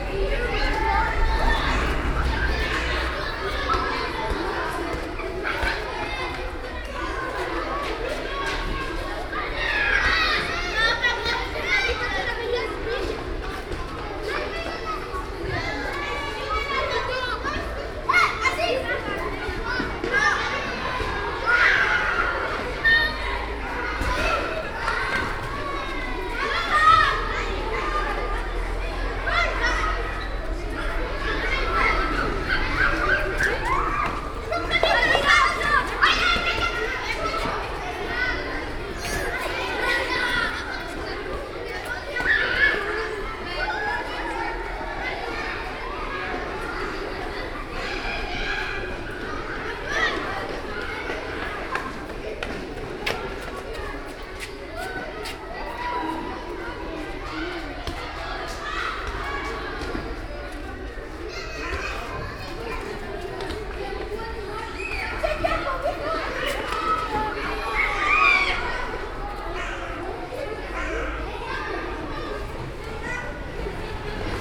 Brussels, Rue de Lausanne, schoolyard